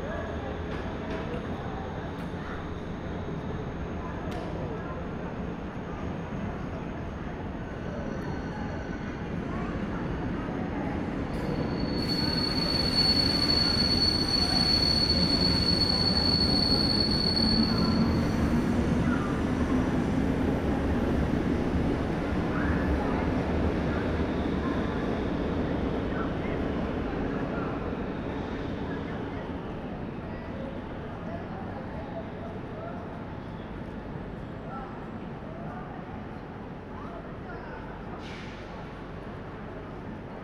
Bahnhofpl., Bern, Schweiz - Bern, Bahnhof, Gleis 6
Waiting for a train on the moderately crowded platform No 6.
Bern/Berne, Schweiz/Suisse/Svizzera/Svizra